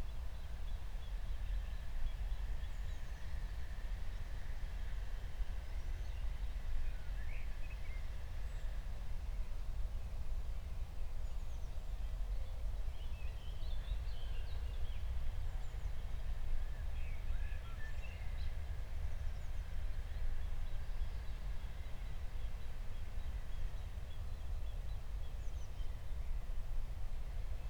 19:00 Berlin, Buch, Mittelbruch / Torfstich 1